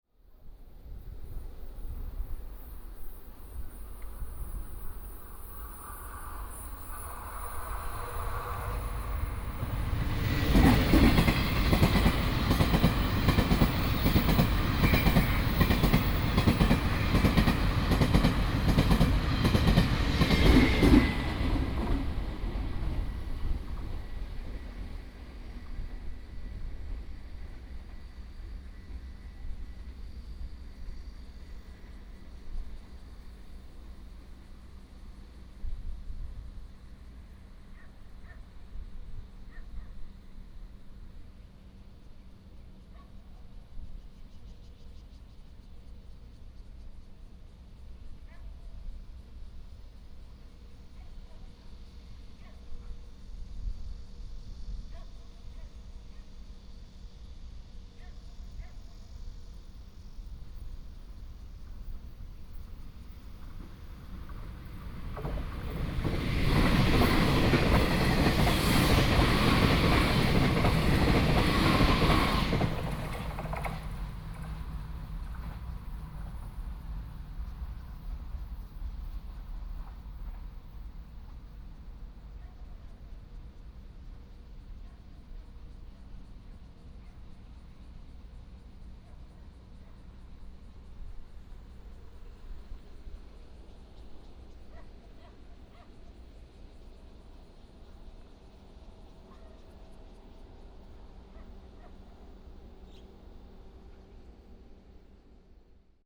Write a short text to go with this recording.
Next to the farm, The train runs through, traffic sound, bird sound